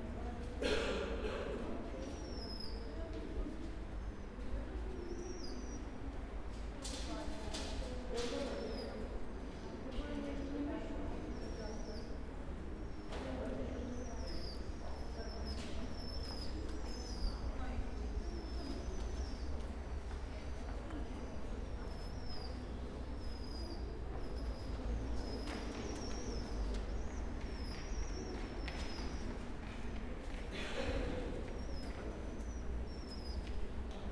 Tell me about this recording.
waiting for a tram, old and modern carriages arrive, squeals of young pigeons